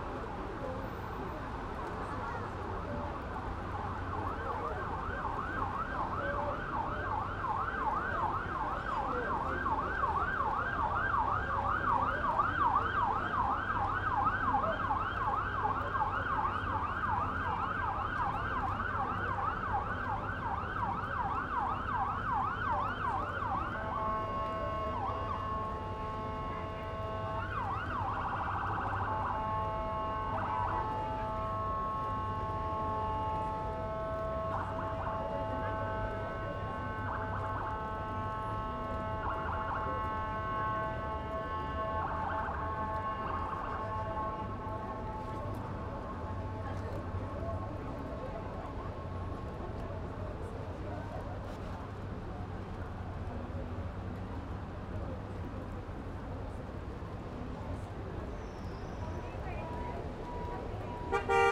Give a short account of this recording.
Rain in Mexico City during the night on 18th of july (World Listening Day 2015) recorded from the balcony above the Alameda Park (downtown Mexico City). Thunder and rain, voices and cars in background. Some police siren (during a long time at the end). WLD 2015, Recorded by a MS Setup inside a Cinela Zephyx Windscreen and Rain Protection R-Kelly, Sound Devices 788T Recorder + CL8